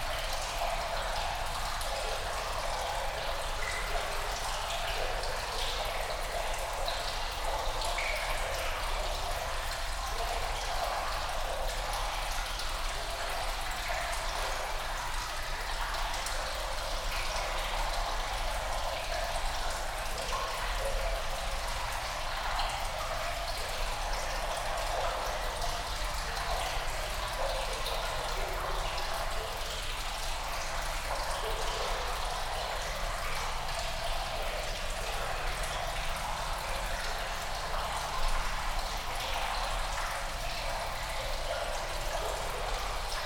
Konsul-Smidt-Straße, Bremen, Germany - Echoey tunnel
Recording the echoey sounds of water in a tunnel.
Deutschland, 14 May